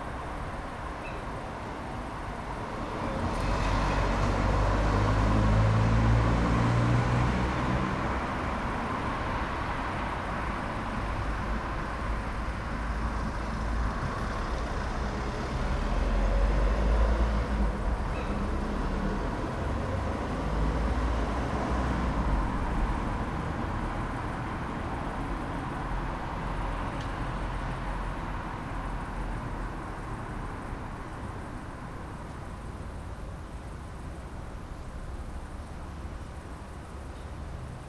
while windows are open, Maribor, Slovenia - owls, night crickets, traffic